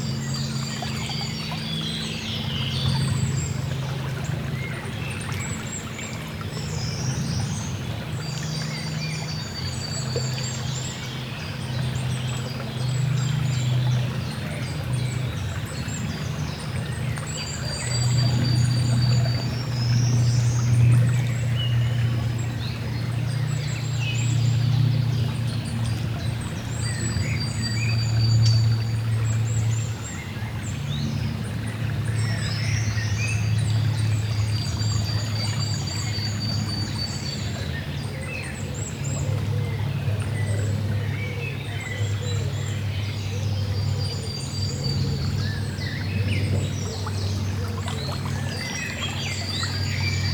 Muehlengrabenpfad, Fritzlar, Deutschland - FritzlarMuehlengraben01
recorded with Sony PCM-D100 with built-in mics
Schwalm-Eder-Kreis, Hessen, Deutschland, 10 May 2020, ~12:00